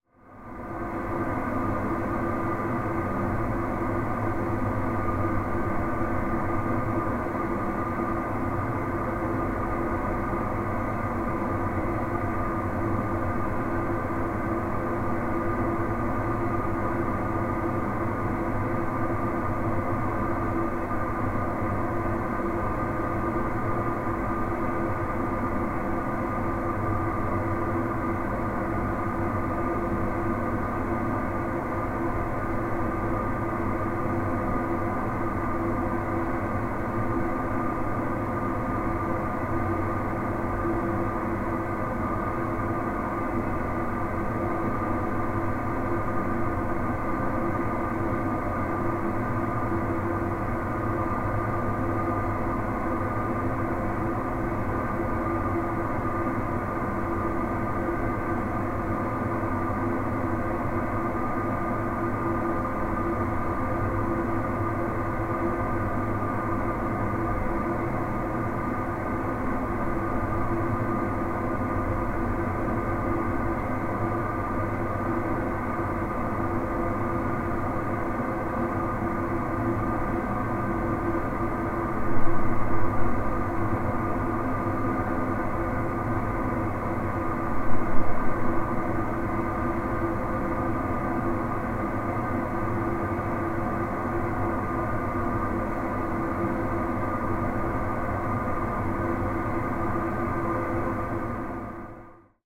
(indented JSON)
{
  "title": "Przewóz, Kraków, Poland - (113) Roomtone / drone",
  "date": "2017-04-21 16:20:00",
  "description": "The recording took place in old industrial buildings, that are now replaced by blocks of flats.\nDuring the transition period, those buildings were mostly empty with this specific reverberance of the roomtone.\nRecorded with Sony PCM D100",
  "latitude": "50.04",
  "longitude": "19.99",
  "altitude": "198",
  "timezone": "Europe/Warsaw"
}